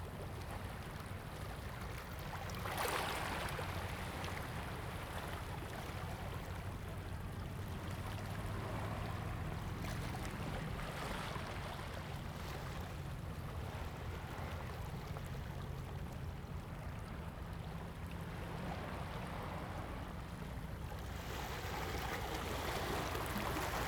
龍門漁港, Huxi Township - At the beach
At the beach, Sound of the waves
Zoom H2n MS +XY